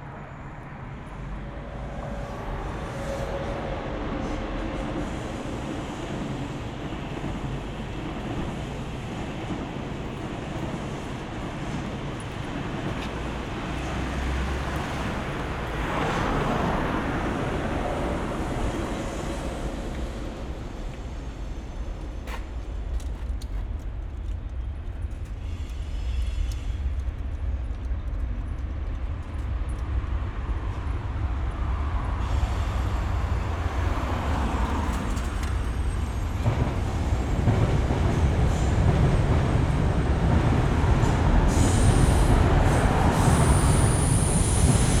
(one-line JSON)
{"title": "Eifelwall, Köln - multiple sonic traffic pattern", "date": "2013-04-25 20:05:00", "description": "the sonic pattern of traffic at this place is quite interesting: trains of all kind on different levels, cars, bikes, pedestrians. the architecture shapes the sound in a very dynamic way. traffic noise appears and fades quickly, quiet moments in between.\n(SD702, Audio Technica BP4025)", "latitude": "50.92", "longitude": "6.94", "altitude": "54", "timezone": "Europe/Berlin"}